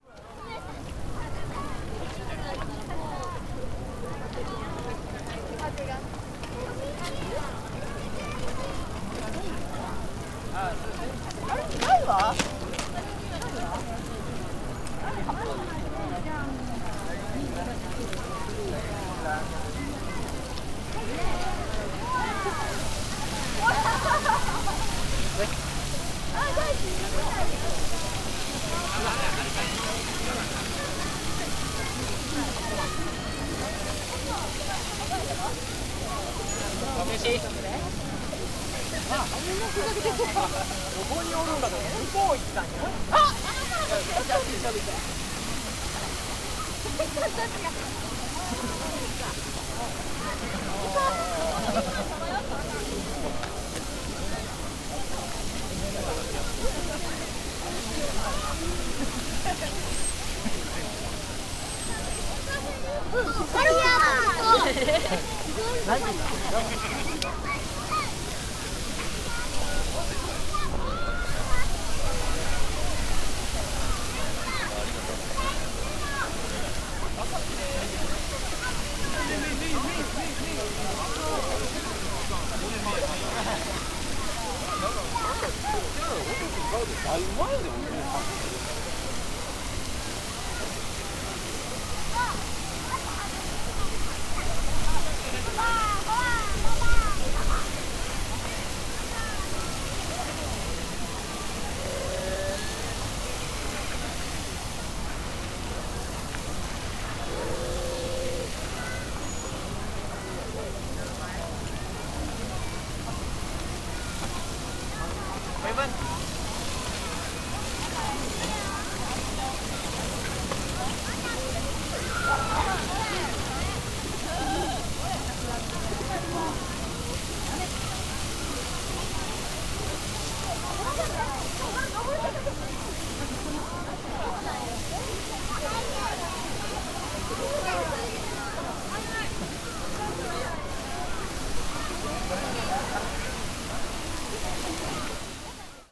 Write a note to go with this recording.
circling the fountain, a lot of visitors around it enjoying the evening in the park